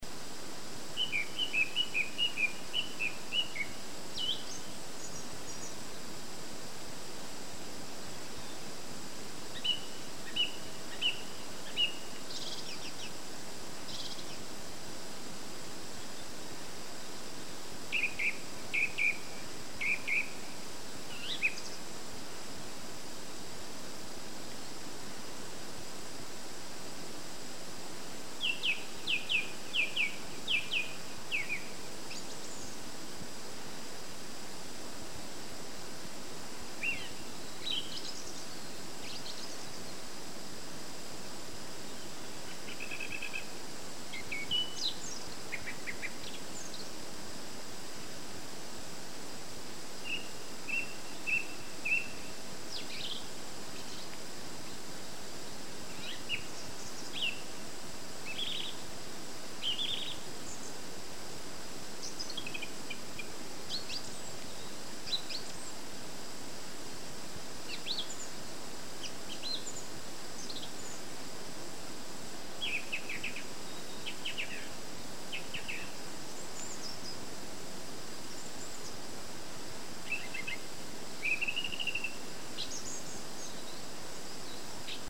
Birds in the hedges. - Penketh
Birds twittering in the hedges and trees that line the farmers path.